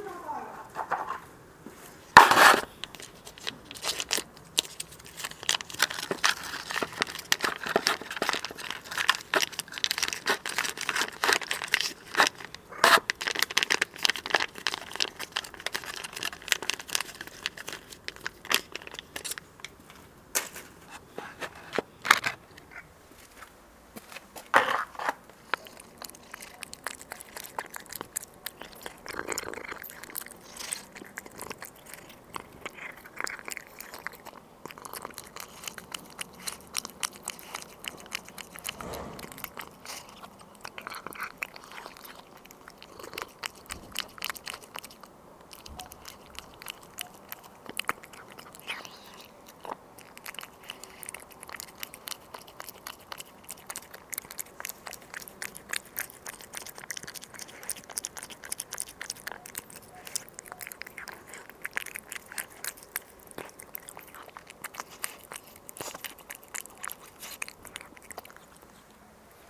Pavia, Italy - Salem the Cat screams for food
Evening daily lament of the cat in the courtyard. Neigbors talk in the background.
20 October, 20:00